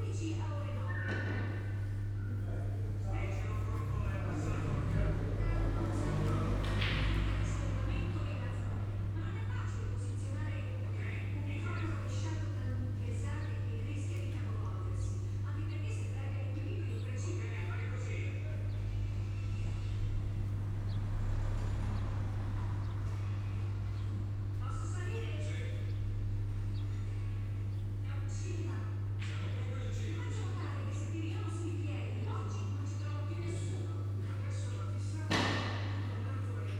{"title": "St.Mary's Band Club, Qrendi, Malta - empty bar ambience", "date": "2017-04-06 12:05:00", "description": "two guests talking and playing billiard at St.Mary's Band Club, Qrendi, Malta\n(SD702, DPA4060)", "latitude": "35.83", "longitude": "14.46", "altitude": "110", "timezone": "Europe/Malta"}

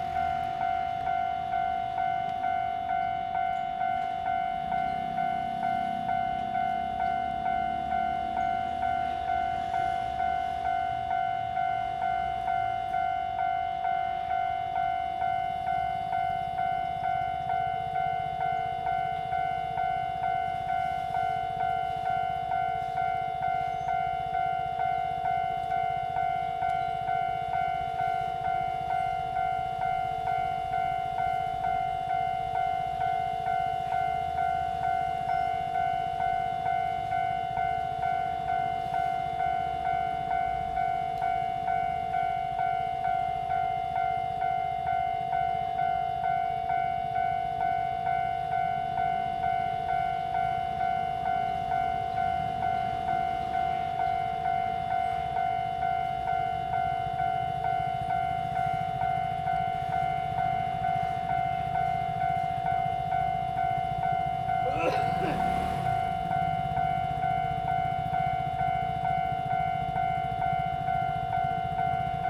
{"title": "Xingzhu St., East Dist., Hsinchu City - Railways", "date": "2017-02-13 13:55:00", "description": "In the railway level road, Traffic sound, Train traveling through\nZoom H2n MS+XY", "latitude": "24.80", "longitude": "120.97", "altitude": "32", "timezone": "GMT+1"}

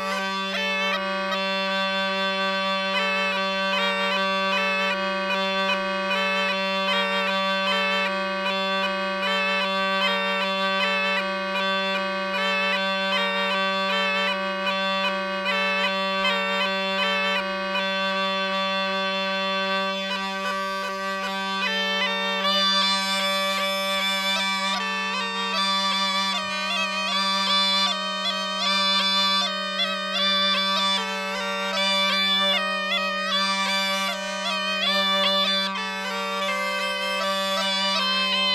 {"title": "Bhandari Swiss Cottage, Tapovan, By-Pass Road, Tapovan, Rishikesh, Uttarakhand, Inde - Rishikesh - Swiss Cottage Les charmeurs de serpents - Snakes charmers", "date": "2008-06-10 10:45:00", "description": "Rishikesh - Swiss Cottage\nLes charmeurs de serpents - Snakes charmers", "latitude": "30.13", "longitude": "78.32", "altitude": "450", "timezone": "Asia/Kolkata"}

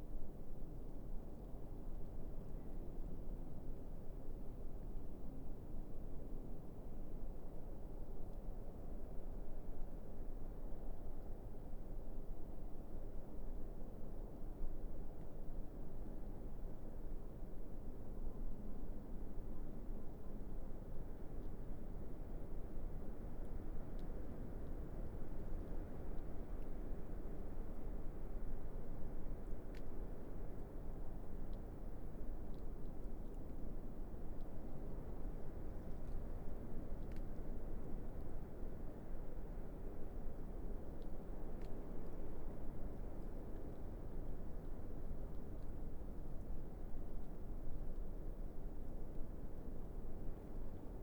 {"title": "Liptovská Kokava, Slovakia - Liptovká Kokava, Slovakia: Wind Across Snowy Fields", "date": "2019-01-03 21:30:00", "description": "Winter in Liptovská Kokava village in northern part of Slovakia. Recorded near last house on the street on the border of village before it opens to wide fields. Those are covered with snow, it is freezing and still snowing. Winds blow across vast snowfields which makes an interesting winter soundscape.", "latitude": "49.09", "longitude": "19.81", "altitude": "789", "timezone": "Europe/Bratislava"}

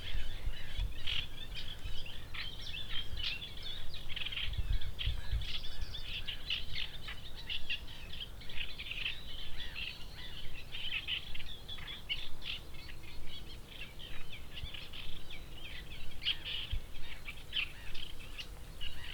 {"title": "Srem, Grzymislawskie Lake, morning frogs", "date": "2010-07-04 04:40:00", "description": "frogs and birds recorded early morning", "latitude": "52.07", "longitude": "17.01", "altitude": "69", "timezone": "Europe/Warsaw"}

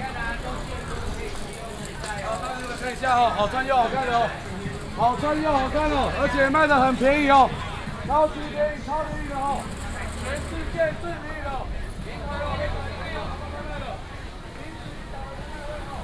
{"title": "Línyí St, Zhongzheng District - Traditional Markets", "date": "2017-08-25 10:19:00", "description": "Walking through the Traditional Taiwanese Markets, Traffic sound, vendors peddling, Binaural recordings, Sony PCM D100+ Soundman OKM II", "latitude": "25.04", "longitude": "121.53", "altitude": "16", "timezone": "Asia/Taipei"}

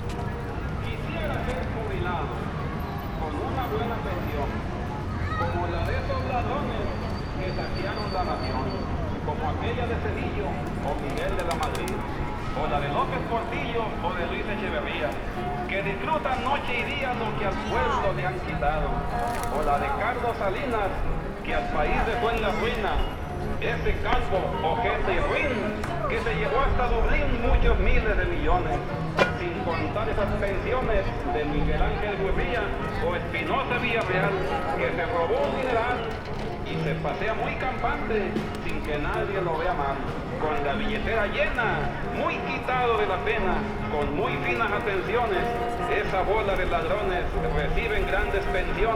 {"title": "Zona Centro, Guadalajara, Mexico - Plaza de Armas", "date": "2014-02-26 13:30:00", "description": "Walking around the central kiosk. Sound of protest music from an encampment of the farming movement 'El Barzón' beside Palacio de Gobierno, background traffic sounds of the busy '16 de Septiembre' avenue, kids playing, movement and chatter of people.", "latitude": "20.68", "longitude": "-103.35", "altitude": "1557", "timezone": "America/Mexico_City"}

